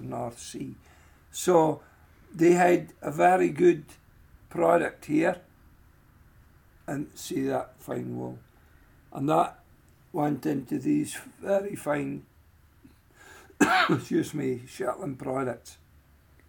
Jamieson & Smith, Shetland Islands, UK - Oliver Henry talking about the history of Shetland Wool
This is Oliver Henry telling me about the history of Shetland wool and talking me through two different types of fleece that have historically been found in the Isles. We were talking in a room towards the back of Jamieson & Smith, with a door open to the docks, so you can hear the gulls outside. He talks about a rougher Shetland wool sheep with "no home" i.e. no real use anywhere in anything. He also talks about "kindly wool" and the importance of soft wool in the economy of crofting. He mentions many of the sorts of garments traditionally made by women in Shetland, and emphasises the importance of the softer wool for their construction. He also describes how the lack of fences in Shetland meant that the two distinct fleeces previously discussed got genetically more and more merged as the sheep ran together and interbred, and then he talks about how the resultant fleeces are mixed, and filled with different finenesses of wool.